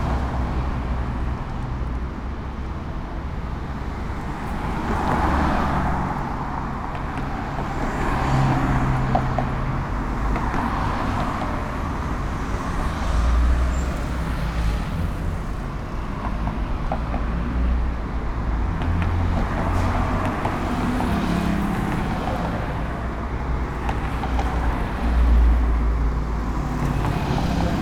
Blvd. Mariano Escobedo Ote., León Moderno, León, Gto., Mexico - Tráfico en el bulevar Mariano Escobedo.
Traffic on Mariano Escobedo Boulevard.
I made this recording on December 13th, 2021, at 5:25 p.m.
I used a Tascam DR-05X with its built-in microphones and a Tascam WS-11 windshield.
Original Recording:
Type: Stereo
Esta grabación la hice el 13 de diciembre 2021 a las 17:25 horas.